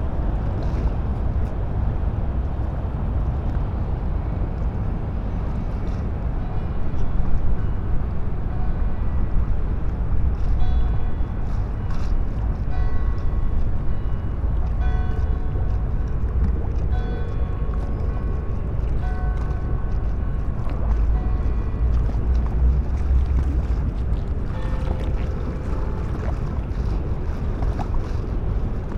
molo Audace, Trieste, Italy - sea gull
morning sea hearers / seerers
project ”silent spaces”